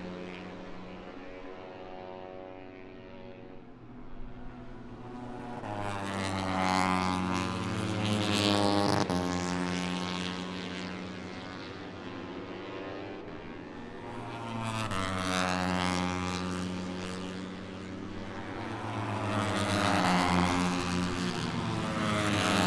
Towcester, UK - british motorcycle grand prix 2022 ... moto three ...
british motorcycle grand prix 2022 ... moto three free practice one ... zoom h4n pro integral mics ... on mini tripod ...